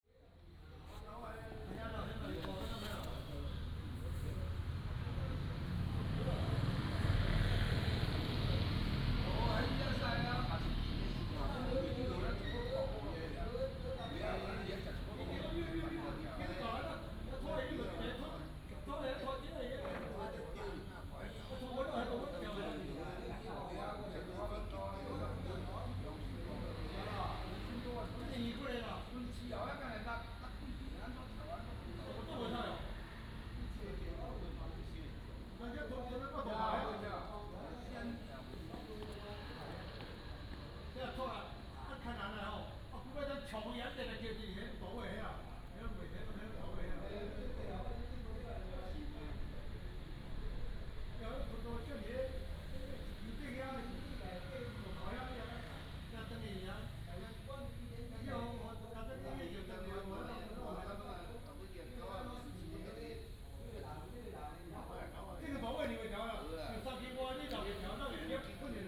慈隆宮, Hsiao Liouciou Island - in front of the temple
In the square, in front of the temple